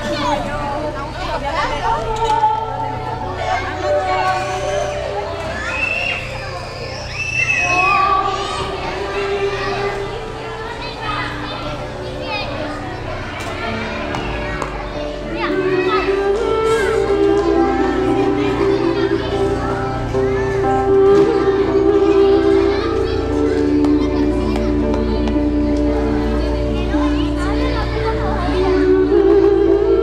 Plaza de España, nº4, Nerja - pop-up flea market
pop-up kind of a flea market happening in the yard; music, children
Nerja, Málaga, Spain, 4 December